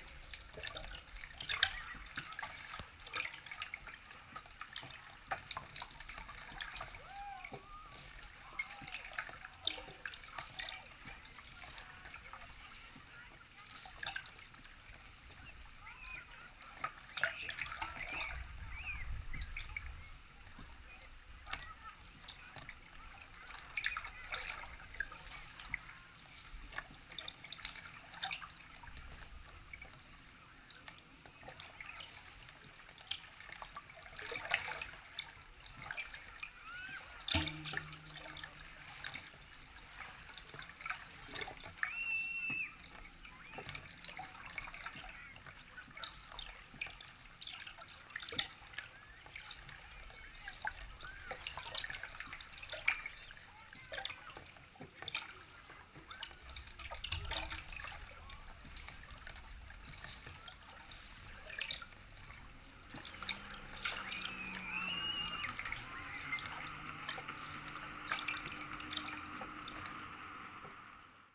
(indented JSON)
{
  "title": "Molėtai, Lithuania, lake Bebrusai, abandoned pontoon",
  "date": "2012-06-30 17:20:00",
  "description": "contact microphone on abandoned metallic pontoon",
  "latitude": "55.20",
  "longitude": "25.47",
  "timezone": "Europe/Vilnius"
}